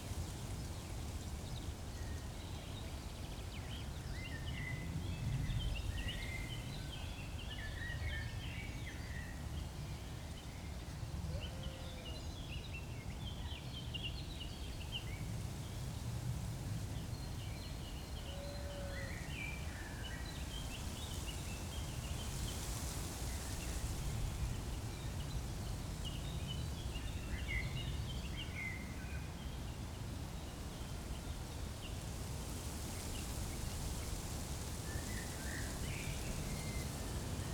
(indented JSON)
{
  "title": "Beselich, Niedertiefenbach - forest edge, leaves in wind",
  "date": "2014-04-21 18:35:00",
  "description": "windy edge of a young forest\n(Sony PCM D50, Primo EM172)",
  "latitude": "50.44",
  "longitude": "8.15",
  "altitude": "231",
  "timezone": "Europe/Berlin"
}